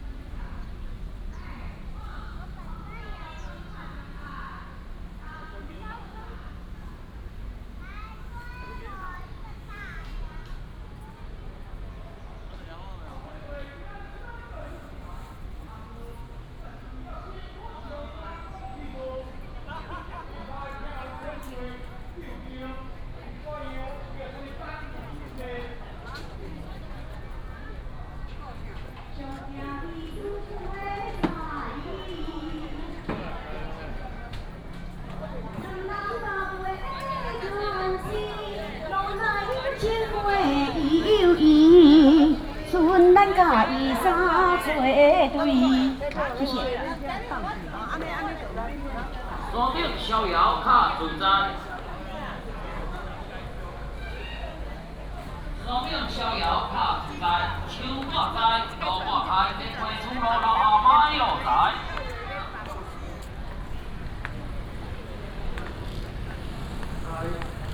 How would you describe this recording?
Walking in the temple, Traffic sound, sound of birds, The plane flew through